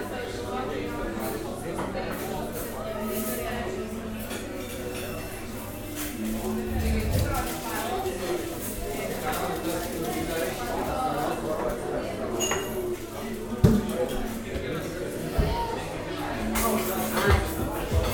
Svencele, Lithuania, inside the cafe
Svencele kite flying center. The map still show an empty place, when in reality there's a cafe with a lot of kite flying maniacs